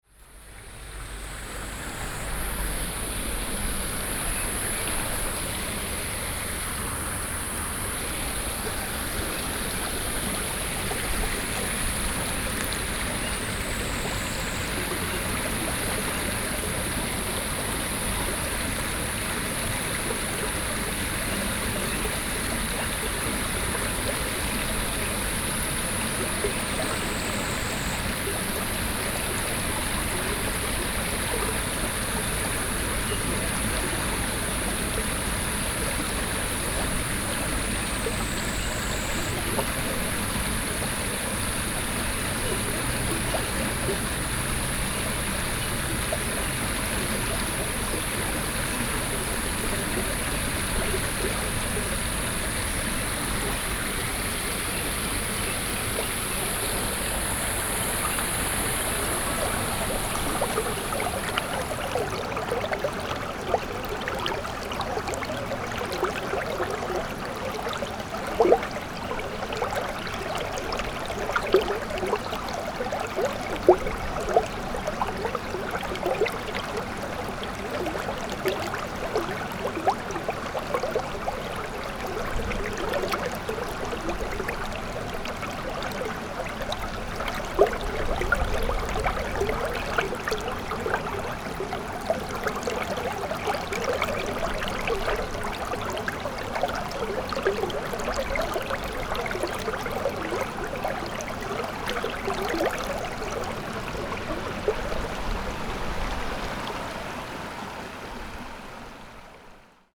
復村圳, Ln., Dizheng St. - The sound of water streams
The sound of water streams, Birds sound
Sony PCM D50